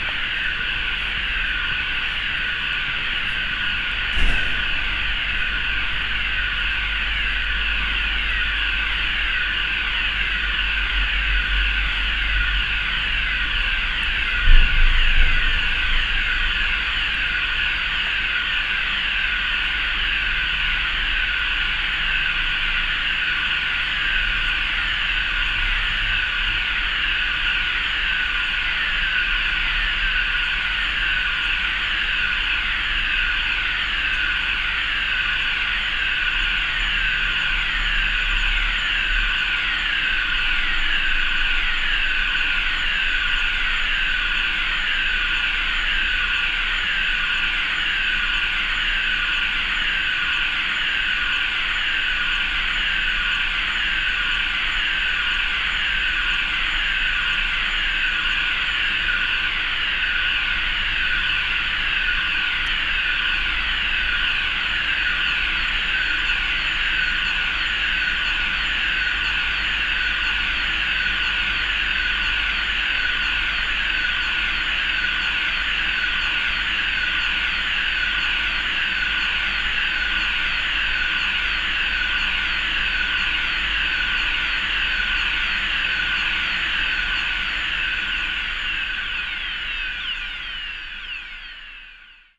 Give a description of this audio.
A second recording of the same happening - here inside a large and empty parking area - with all alarm sirenes sounding - somehow orchestral and magic, soundmap d - social ambiences and topographic field recordings